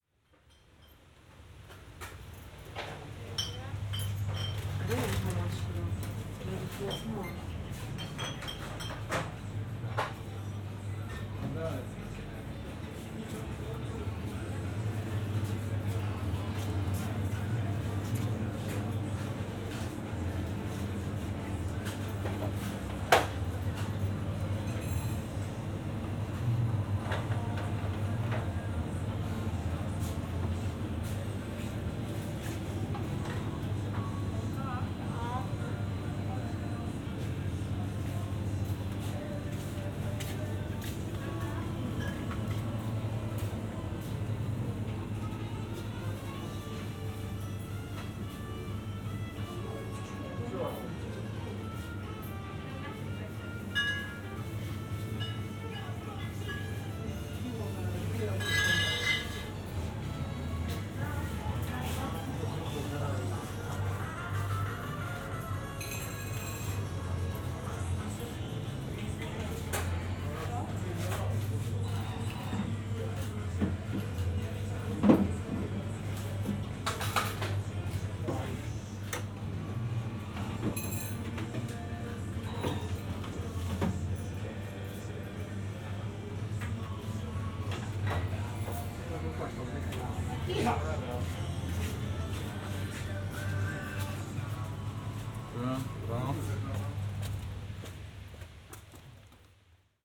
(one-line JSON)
{"title": "Poznan, Jana III Sobieskiego housing estate - convenient store", "date": "2014-07-11 22:00:00", "description": "binaural recording. a short visit to a convenient store.", "latitude": "52.46", "longitude": "16.90", "altitude": "101", "timezone": "Europe/Warsaw"}